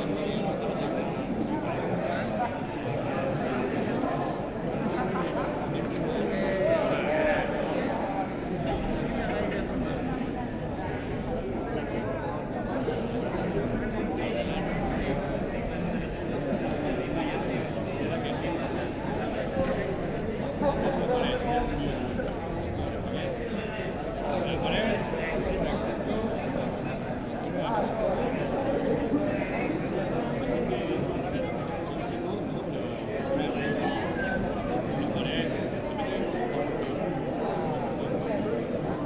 Plaça de la Revolució
People having nice time in a square in the district of Gracia, Barcelona, during night.
Barcelona, Spain, 7 January 2011